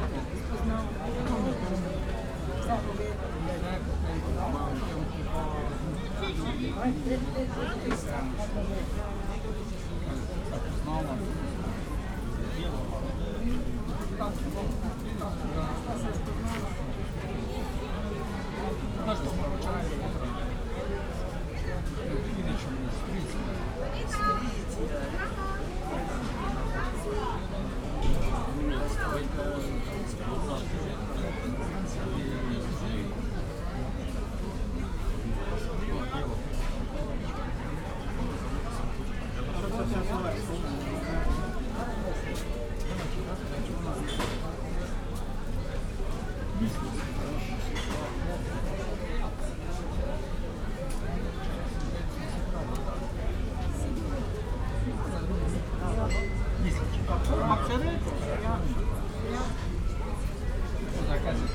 Maribor, Vodnikov Trg, market - cafe ambience
small cafe at the edge of the market, guests talking and busy market sounds
(SD702, DPA4060)
Maribor, Slovenia, 1 August 2012, ~11:00